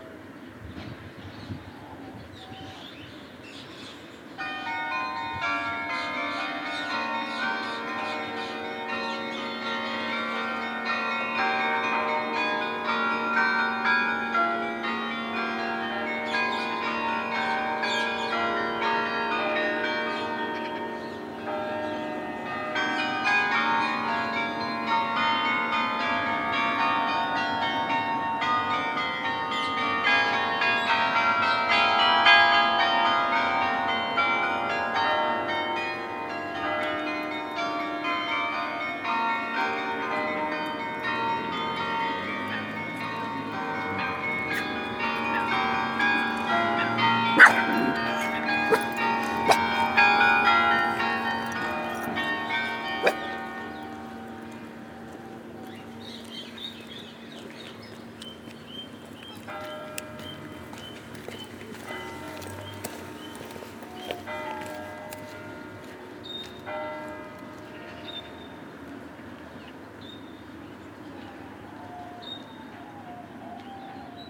Dendermonde, België - Dendermonde carillon
From far, the Dendermonde carillon ringing the hour, and walking near me, a small dog really doesn't understand what I do !
Dendermonde, Belgium, 23 February 2019, 16:00